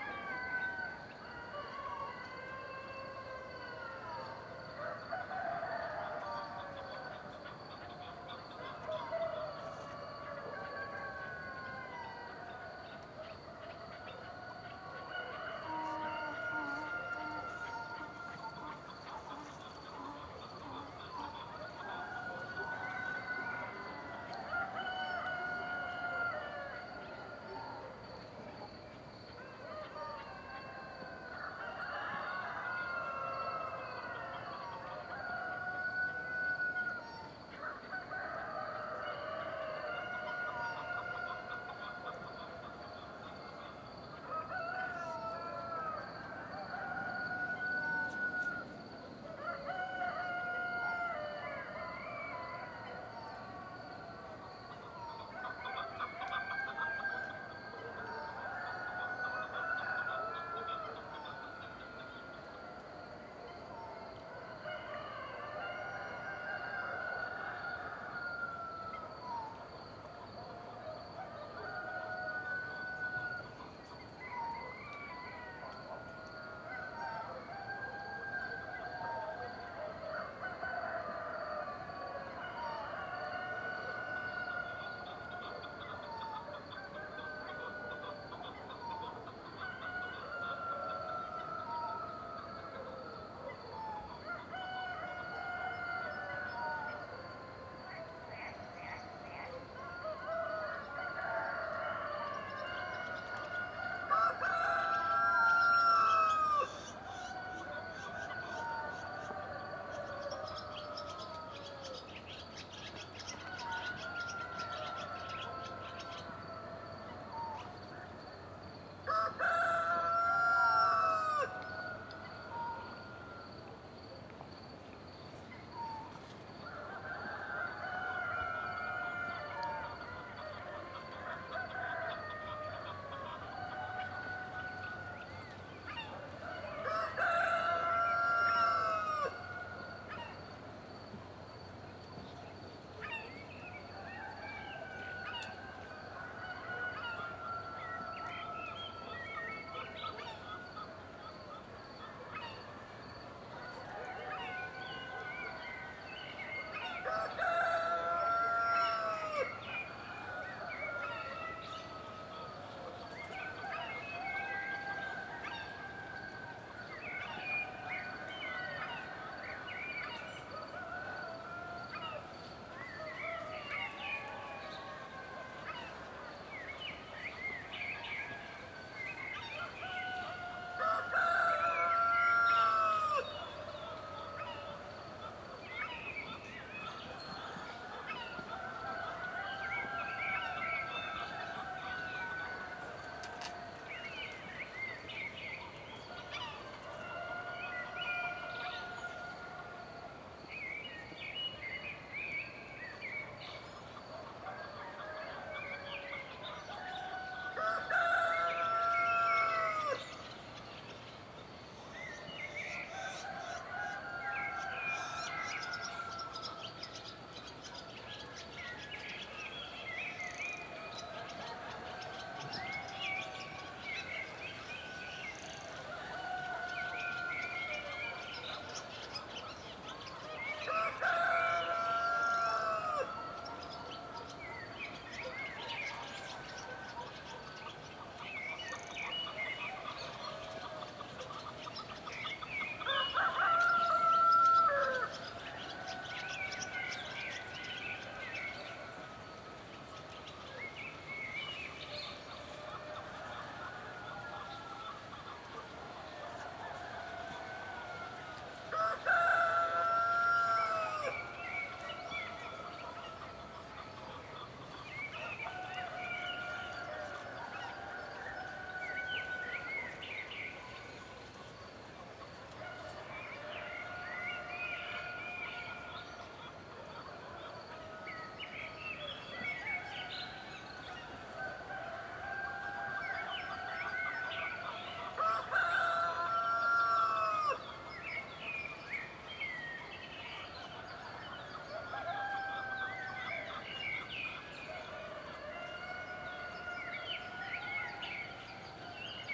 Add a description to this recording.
Dawn (rooster) chorus on the riverbank. To the right are sounds from this side of the river and to the left you can hear animals (including donkeys) from the other side of the river. Occasionally to the right you can also hear the footsteps and rustling of a stray dog curious about the recording process. (Recorded w/ Audio-Technica BP4025 on SD 633)